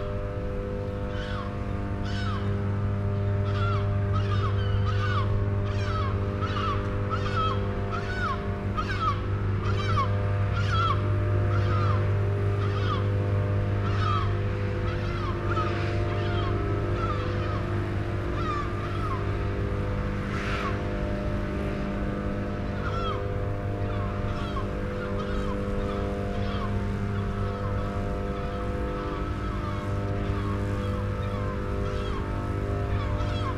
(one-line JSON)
{"title": "E-on coal burning powerstation, Maasvlakte - Transformers at E-ON power station", "date": "2011-07-24 11:51:00", "description": "Telinga Parabolic microphone recording of electricity transformers.\nRecording made for the film \"Hoe luidt het land\" by Stella van Voorst van Beest.", "latitude": "51.96", "longitude": "4.02", "altitude": "2", "timezone": "Europe/Amsterdam"}